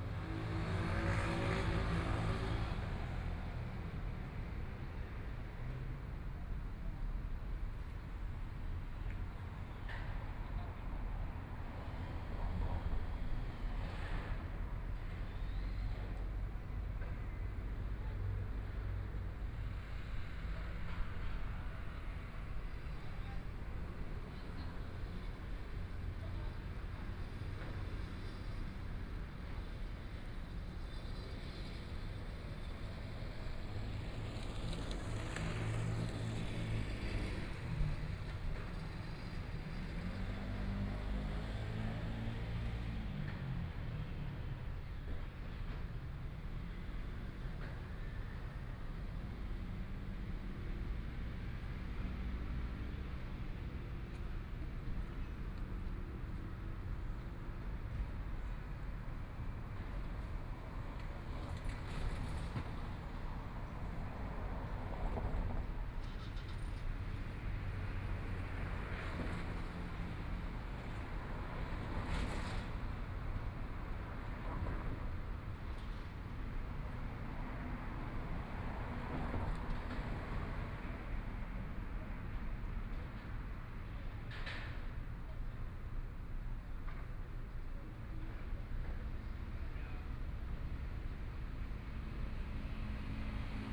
Tiehua Rd., Taitung City - Traffic Sound
Traffic Sound, in the Abandoned train station, Binaural recordings, Zoom H4n+ Soundman OKM II